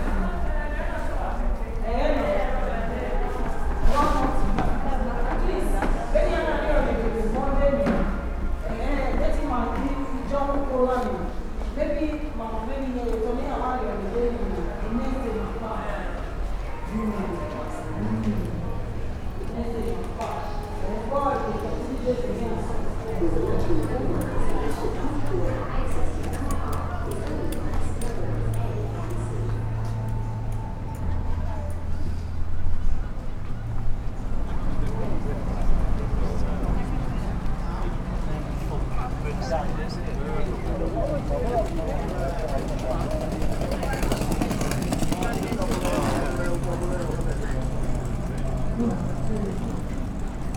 Gloucester Quays Shopping Centre, Gloucester, UK - Real Time Walk in a Shopping Centre.
A real time wander through the cavernous interior of a modern covered shopping centre. This place is never really busy and individual sounds are easily recognised and the ambient sounds change rapidly. Recoded with a MixPre 3 and 2 x Bayer Lavaliers
South West England, England, United Kingdom, 19 July 2018, ~15:00